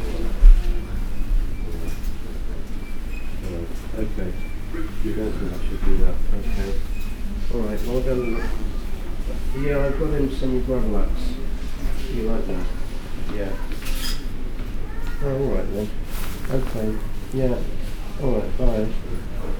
Walk through the Supermarket, Malvern, UK - Walk
Walk through a busy supermarket.
MixPre 6 II with 2 x MKH 8020s